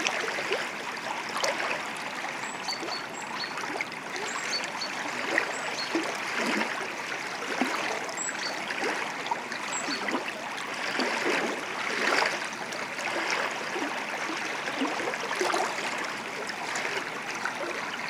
Vyžuonos, Lithuania, river, birds
River flow, black woodoeckers, sunny winter day
Utenos apskritis, Lietuva, 13 February 2022